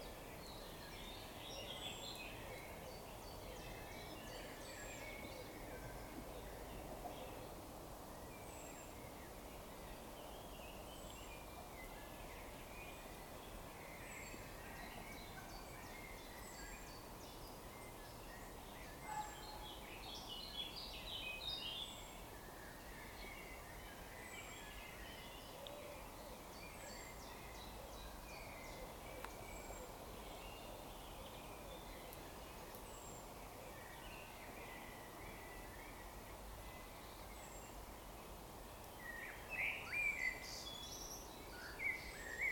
Beckerbruch Park, Georgenallee, Dessau-Roßlau, Germany - Birds chirping
Birds chirping on a lazy spring afternoon, at this natural getaway from the small city of Dessau. Recorded on Roland R-05.